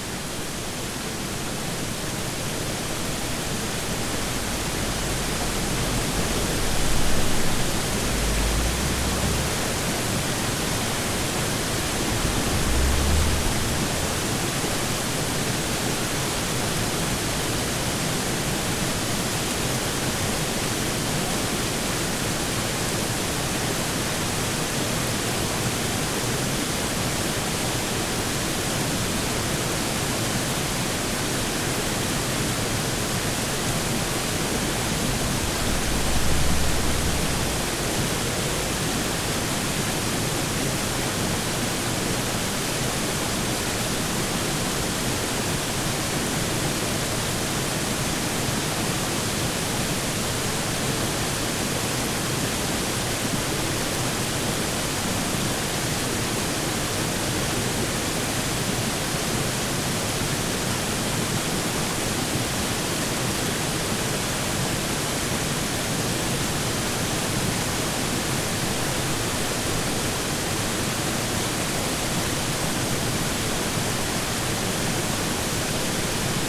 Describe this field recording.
Water running under an overpass into Lake Skannatati, Harriman State Park. The water runs from right to left over a series of rocks, the source of the water being Lake Askoti. [Tascam DR-100mkiii & Primo EM-272 omni mics]